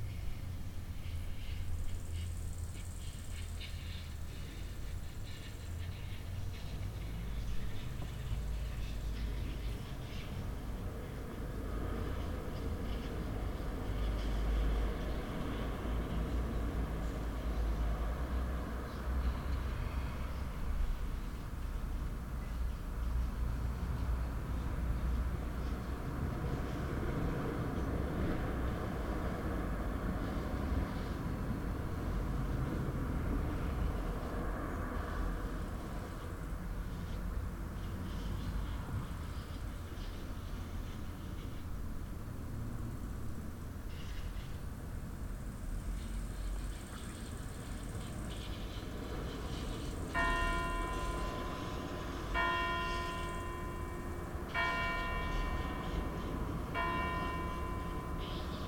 Parking de l'école, Chindrieux, France - Midi en été
Sur le parking de l'école de Chindrieux par une belle journée d'été, sonnerie du clocher, quelques criquets et oiseaux, le bruit de la circulation sur la RD 991 qui traverse le village.
France métropolitaine, France, 1 August 2022, 12:00